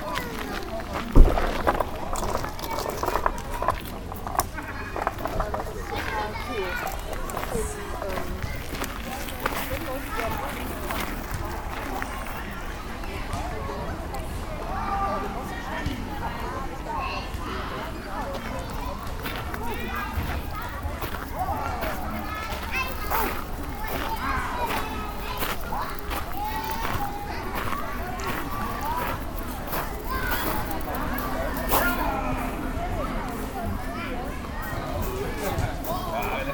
cologne, ehrenfeld, wißmannstr, playground
soundmap d: social ambiences/ listen to the people - in & outdoor nearfield recordings
19 June 2009